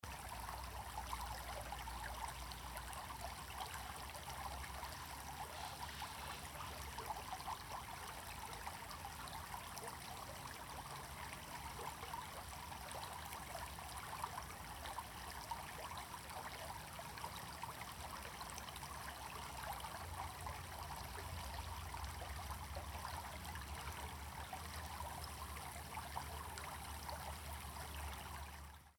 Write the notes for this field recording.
River flow, summer time @ Martinovo Selo, Grobnik region, Croatia.